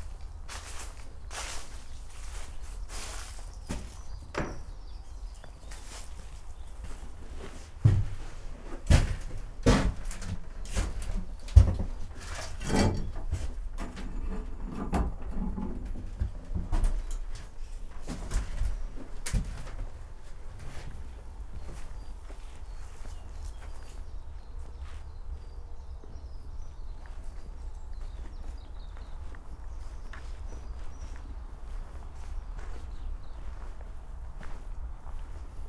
Borschemich, Erkelenz, Alter Kirchweg - slow walk
Borschemich, half abandoned village, slow walk along Alter Kirchweg, trying to approach the howling dog.
(tech: SD702, DPA4060)